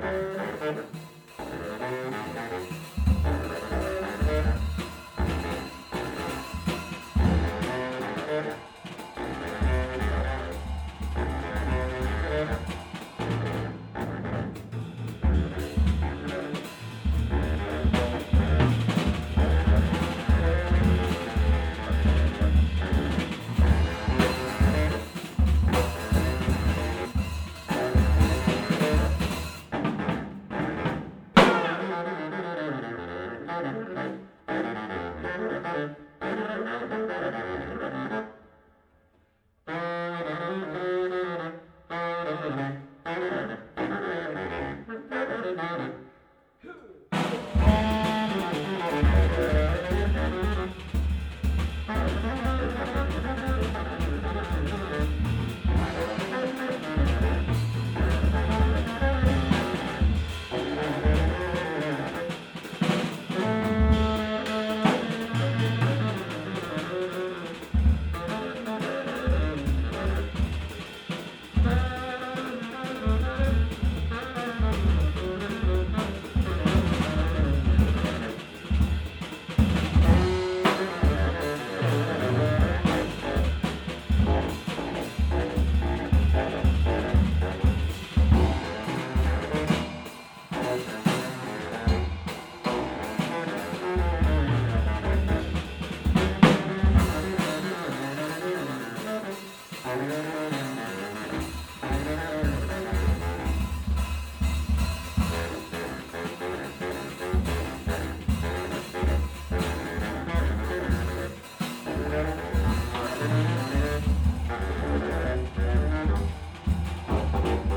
Cologne, atelier concert - Köln, atelier concert
excerpt from a private concert, may 27, 2008.
dirk raulf, bass saxophone, and frank koellges, drums, playing a version of Thelonious Monk's "Well You Needn't".